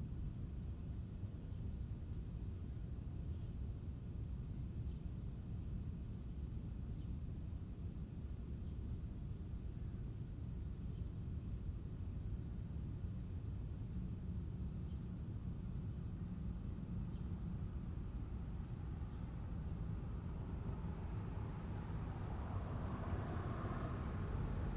Early morning soundscape in the Jefferson Park neighborhood, Chicago, Illinois, USA, recorded on World Listening Day 2012.
2 x Audio Technica AT3031, Sound Devices 302, Tascam DR-40.
IL, USA, 18 July